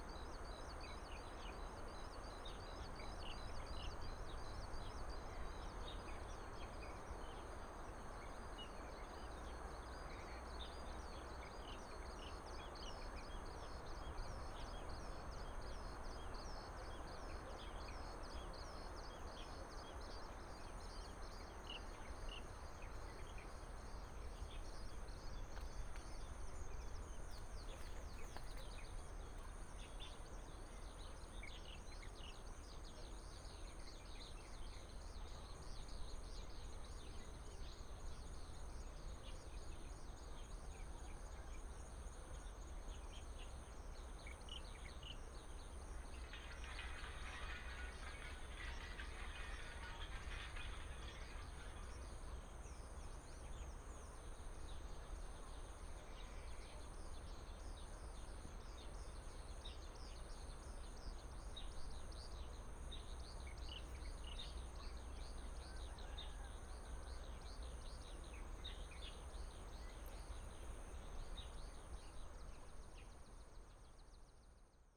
Stream sound, Beside the river embankment, Facing the village, Insect cry, Bird cry, Shotgun sound, Dog barking, Chicken crowing